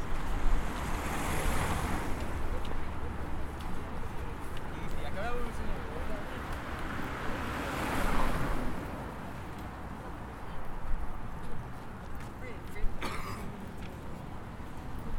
Calle Bertrand Russell, Madrid, España - North access
Voices and steps of people who come talking. There is heavy traffic on the road, as well as at the entry to university. Mainly are cars coming in, but on the road there are also trucks and vans; also intercity bus.one bus leaves the university and takes the road. Sound of steeps getting closer. Two girls are chatting. The cars continue coming in to the University regularly. There is fluid traffic on the road.
Recorded with a Zoom H4n